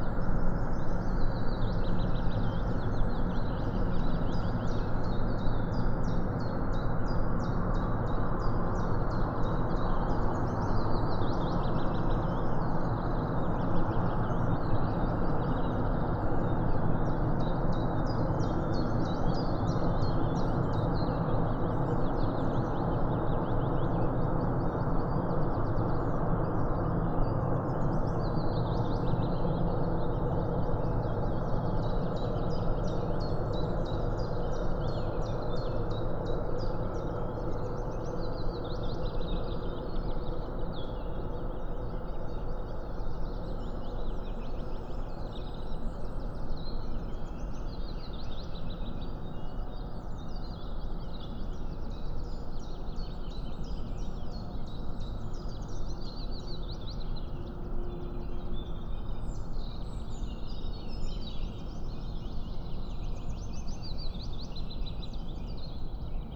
at the river Löcknitz, Grünheide - morning ambience with aircraft and distant train
early morning at the Löcknitz, a small river in east germany. attracted by the calls of bird i could not identify. it's a pity that there's a constant rumble of aircrafts, and distant freight train traffic. no such thing like silence...
(SD702, MKH8020 AB)
Grünheide (Mark), Germany, 30 April 2016